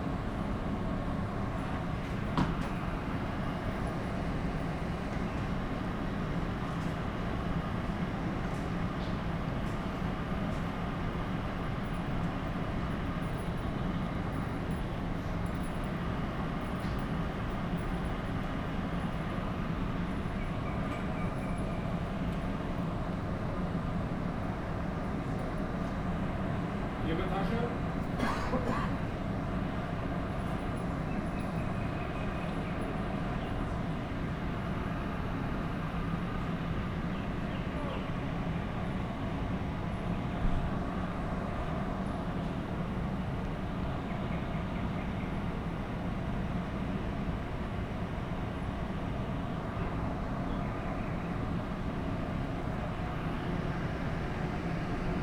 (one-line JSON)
{"title": "S-Bahn Station, Berlin-Buch - station ambience, nightingale, freight train", "date": "2019-05-07 00:05:00", "description": "S-Bahn station ambience at midnight, a nightingale sings in the nearby little wood, a freight train rushes through (loud), suburb trains arrive and depart, people talking...\n(Sony PCM D50, DPA4060)", "latitude": "52.64", "longitude": "13.49", "altitude": "58", "timezone": "GMT+1"}